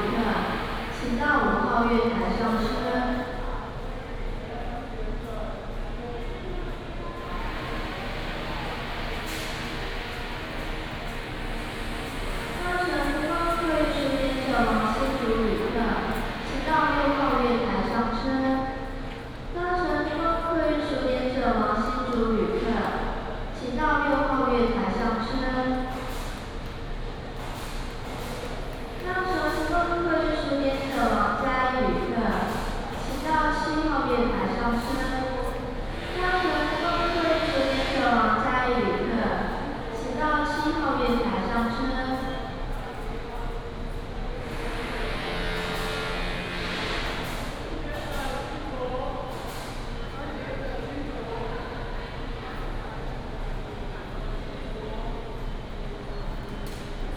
臺中轉運站, East Dist., Taichung City - In the terminal lobby
In the terminal lobby, Station information broadcast